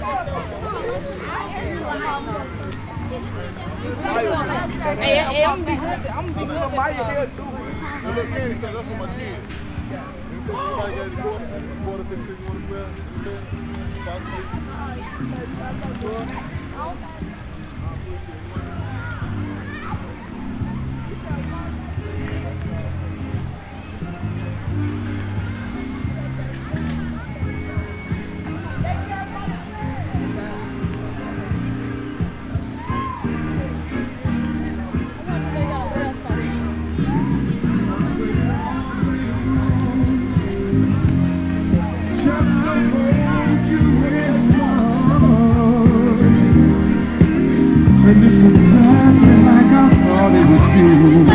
Washington Park, South Doctor Martin Luther King Junior Drive, Chicago, IL, USA - bud biliken picnics